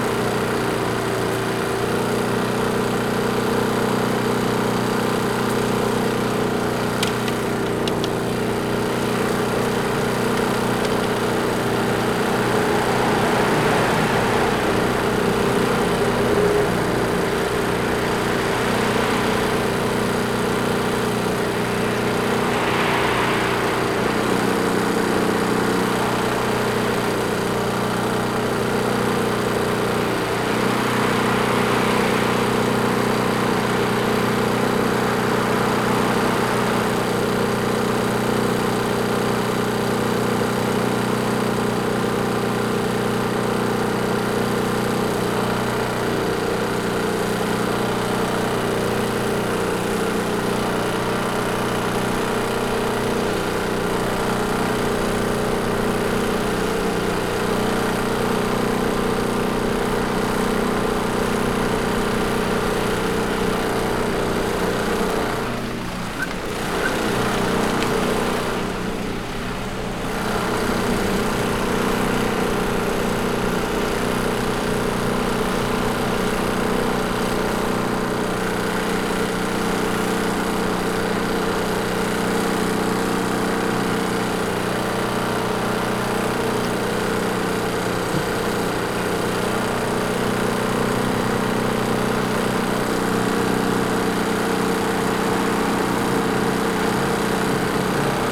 Gėlių g., Ringaudai, Lithuania - Portable electric generator noise
A loud portable electric generator in front of a "Maxima" store + traffic sounds. Recorded with ZOOM H5.